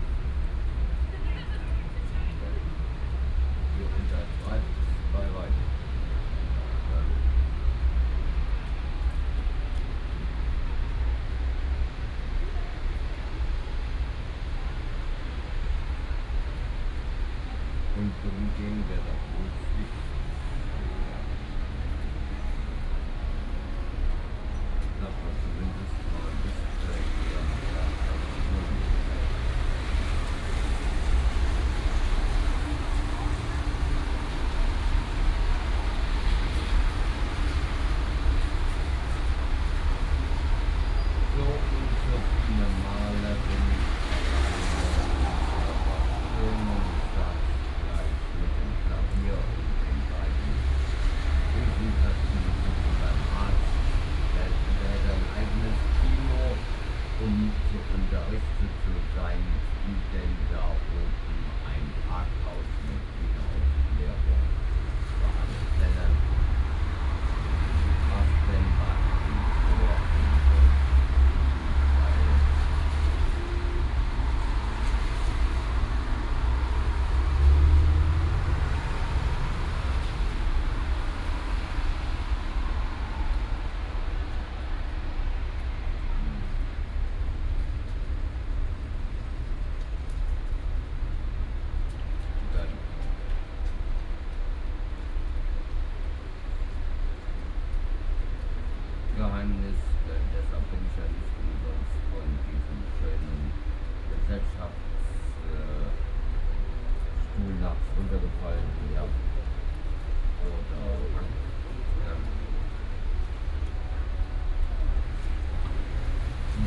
Löhrrondell, Herz-Jesu Kirche, Koblenz, Deutschland - Löhrrondell 5
Binaural recording of the square. Fifth of several recordings to describe the square acoustically. Here a homeless guy who is sitting in front of the church is starting a monologue. Rainy days, the sound of cars on the wet street. Sometimes you hear the rehearsal of an organ.